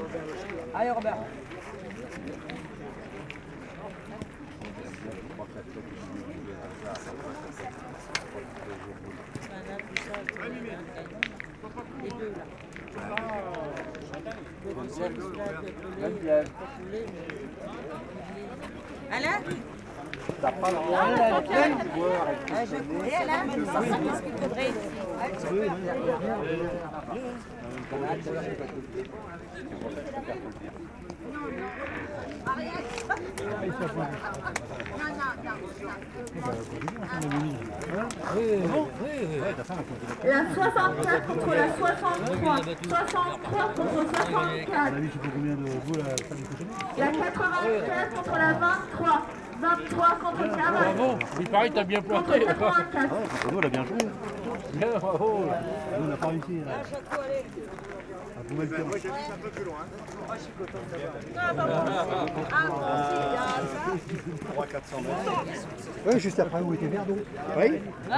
A huge bowling competition, with a lot of old persons playing and kindly joking.
Aubevoye, France